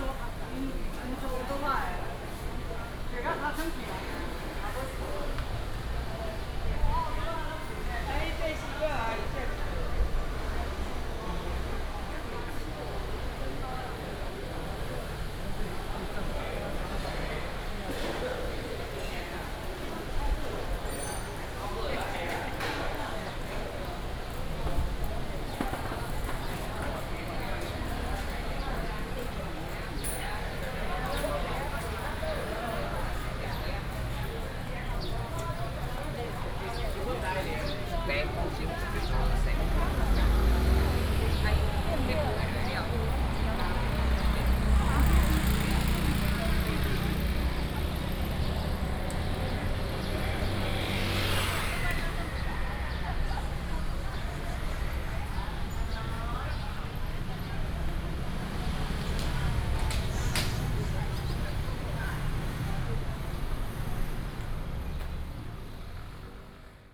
學甲公有市場, Xuejia Dist., Tainan City - Walking in the public market
Walking in the public market, Traditional market block, Traffic sound
Xuejia District, Jisheng Road, 106號公有市場, 15 May 2019